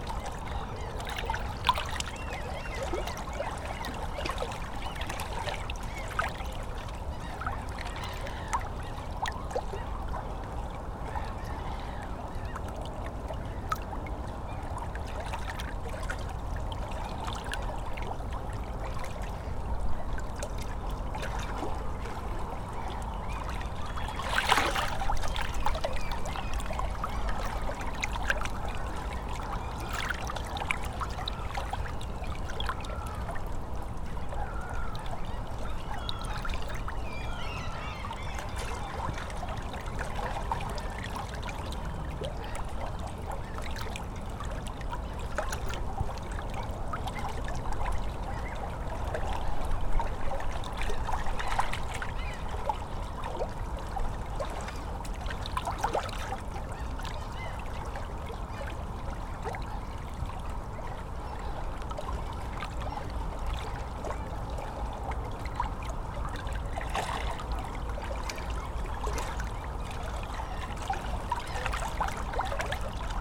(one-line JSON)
{"title": "Fisksätra Holme - Sur le bord de l'ïle", "date": "2013-04-30 13:34:00", "description": "Sur le bord de l'île, on entend toujours en fond les voitures. Parfois aussi des bateaux et régulièrement le train.", "latitude": "59.30", "longitude": "18.25", "altitude": "9", "timezone": "Europe/Stockholm"}